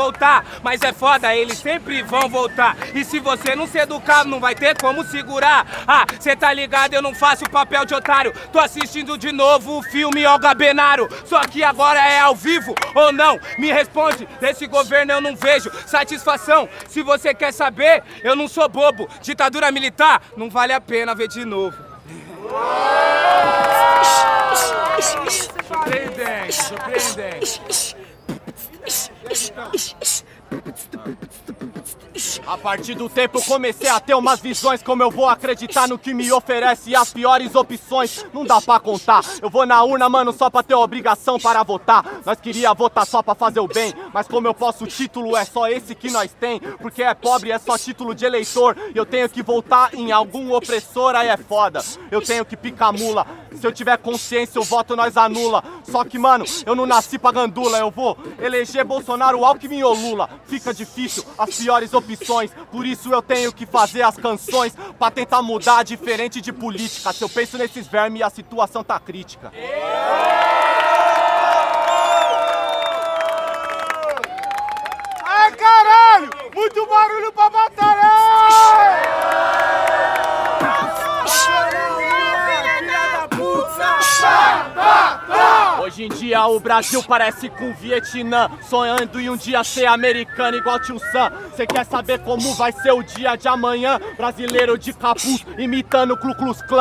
Av. Paulista, São Paulo - MCs Battle in Sao Paulo (Batalha Racional)
Batalha Racional on Avenida Paulista each Friday.
Recorded on 16th of March 2018.
With: Camoes, Koka, 247, Bone, Igao, Coiote, Skol, Neguinao, Kevao, Segunda Vida, Viñao Boladao, Luizinho, Danone, Fume...
Recorded by a MS Setup Schoeps CCM41+CCM8 on a cinela suspension/windscreen.
Recorder Sound Devices 633
São Paulo - SP, Brazil, 16 March